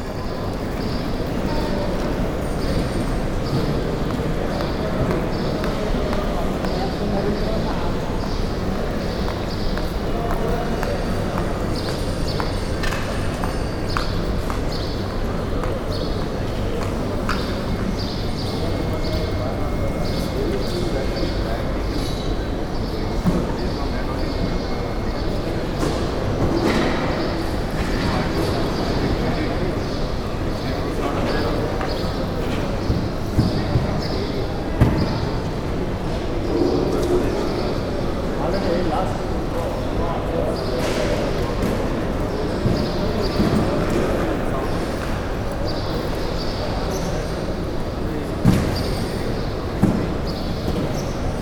bangalor, karnataka, airport, custom hall
behind the passport
control - a second recording of the same sitation - from a different angle
inernational soundscapes - social ambiences and topopgraphic field recordings